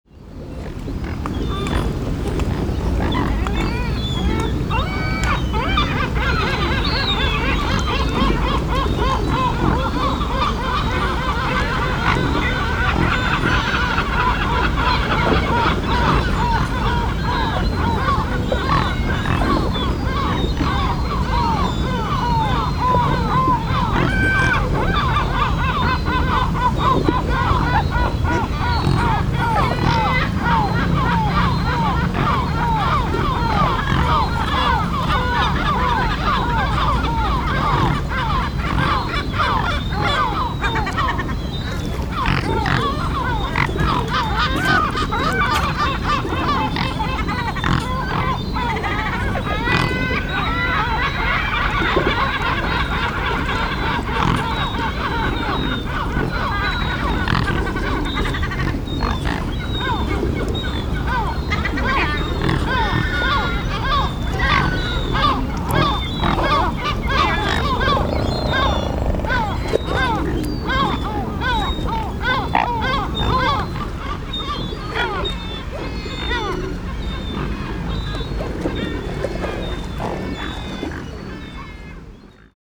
Gulls and Swans - Severnside, Worcester, UK
Recorded on the cathedral side of the river. The swans from the sanctuary across the river swim between these two sites according to who is feeding the most bread.